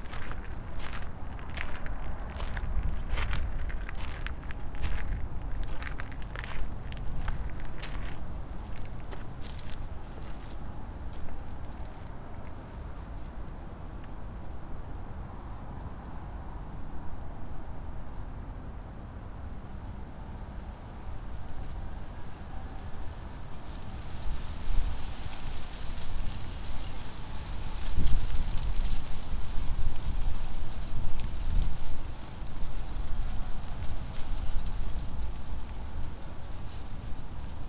lotus pond, ueno, tokyo - winds through lotus leaves